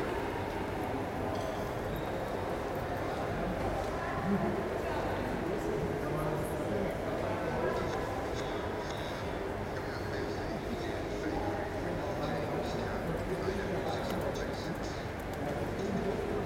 {"title": "mannheim main station, hall - mannheim main station, hall (2)", "description": "recorded june 29th, 2008.\npart 2 of recording.\nproject: \"hasenbrot - a private sound diary\"", "latitude": "49.48", "longitude": "8.47", "altitude": "97", "timezone": "GMT+1"}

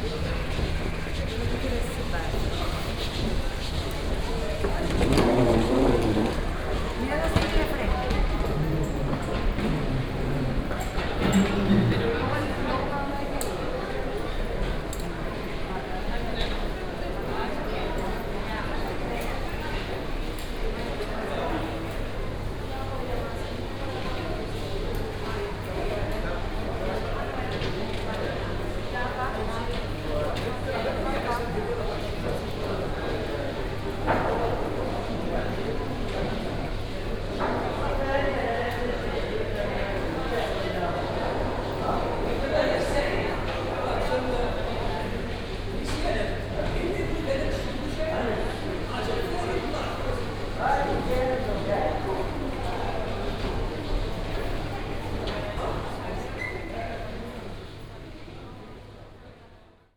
{"title": "Airport Marrakesch-Menara - security zone, elevator", "date": "2014-03-01 11:10:00", "description": "security zone, after the checks, elevator", "latitude": "31.60", "longitude": "-8.03", "timezone": "Africa/Casablanca"}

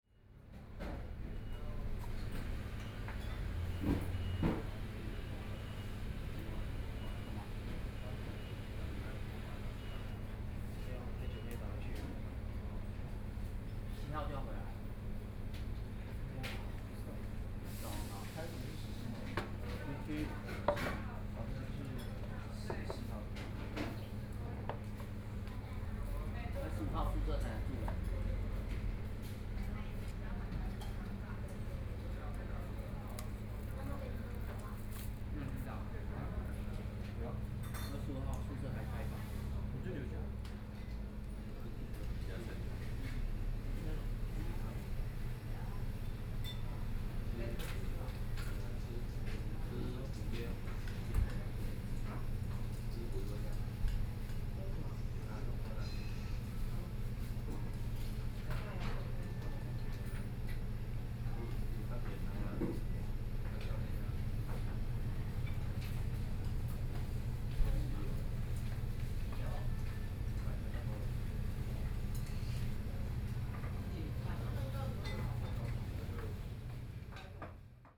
{"title": "Zhongshan Rd., Taitung City - In the restaurant", "date": "2014-01-16 19:26:00", "description": "In the restaurant, Binaural recordings, Zoom H4n+ Soundman OKM II ( SoundMap2014016 -27)", "latitude": "22.75", "longitude": "121.15", "timezone": "Asia/Taipei"}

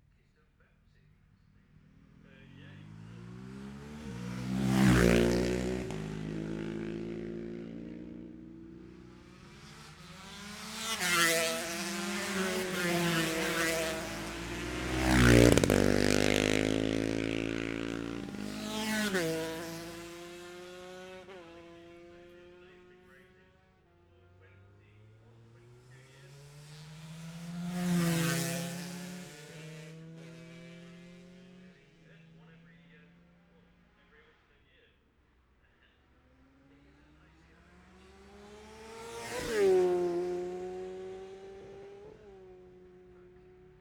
{"title": "Jacksons Ln, Scarborough, UK - gold cup 2022 ... lightweights practice ...", "date": "2022-09-16 10:45:00", "description": "the steve henshaw gold cup 2022 ... lightweight practice ... dpa 4060s clipped to bag to zoom h5 ...", "latitude": "54.27", "longitude": "-0.41", "altitude": "144", "timezone": "Europe/London"}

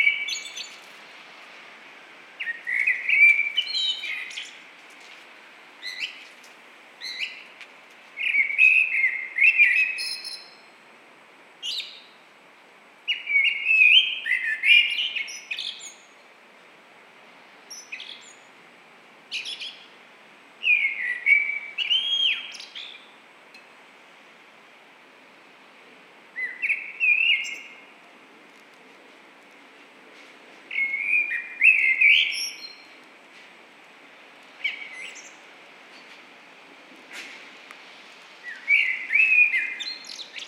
{"title": "Kemptown, Brighton, UK - Blackbird", "date": "2016-02-23 18:24:00", "description": "Captured this behind the University of Brighton's Edward Street location with a Zoom H6 XY pair.", "latitude": "50.82", "longitude": "-0.13", "altitude": "25", "timezone": "Europe/London"}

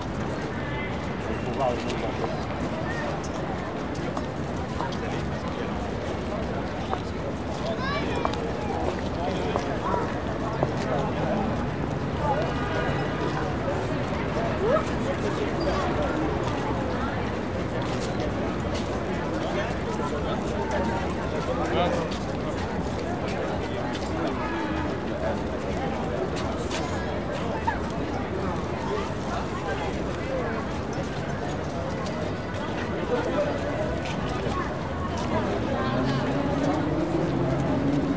{"title": "paris, eiffel tower, at lift", "date": "2009-12-12 13:22:00", "description": "international crowd waiting at the lifts of the tower. traffic passing bye.\ninternational cityscapes - topographic field recordings and social ambiences", "latitude": "48.86", "longitude": "2.29", "altitude": "52", "timezone": "Europe/Berlin"}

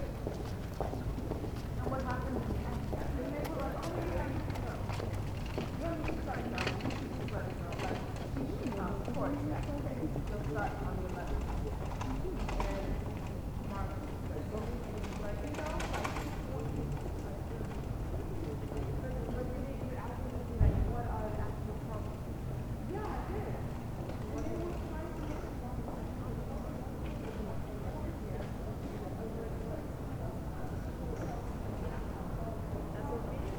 {"title": "Berlin: Vermessungspunkt Friedel- / Pflügerstraße - Klangvermessung Kreuzkölln ::: 10.09.2011 ::: 01:34", "date": "2011-09-10 01:34:00", "latitude": "52.49", "longitude": "13.43", "altitude": "40", "timezone": "Europe/Berlin"}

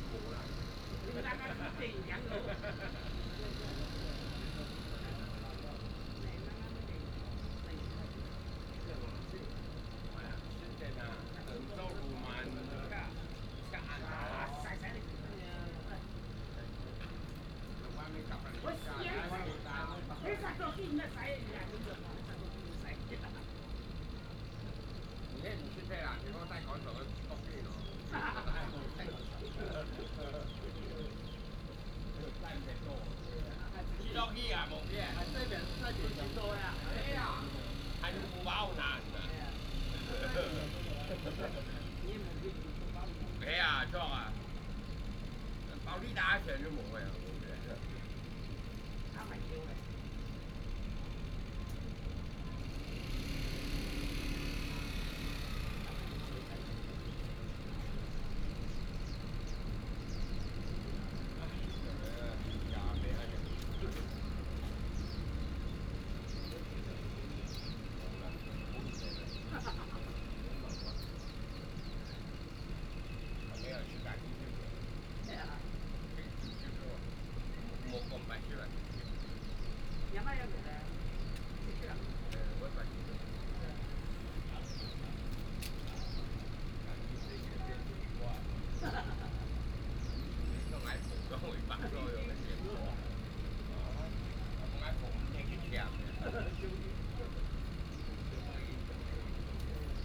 {"title": "牛稠河, Guanxi Township - In the river park", "date": "2017-08-14 17:16:00", "description": "traffic sound, Bird call, In the river park, Hakka people, Factory noise", "latitude": "24.79", "longitude": "121.18", "altitude": "133", "timezone": "Asia/Taipei"}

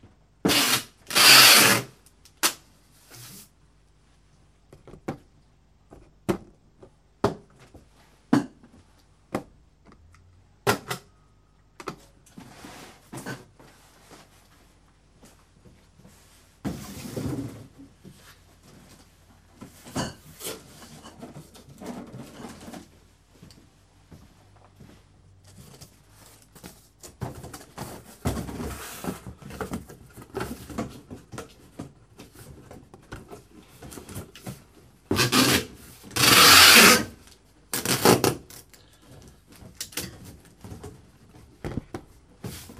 {"title": "Murvica Zapad, Brewery Brlog, Murvica, Croatia - Brewery", "date": "2020-01-07 11:58:00", "description": "putting labels on bottles and packing them in boxes", "latitude": "44.14", "longitude": "15.31", "altitude": "63", "timezone": "Europe/Zagreb"}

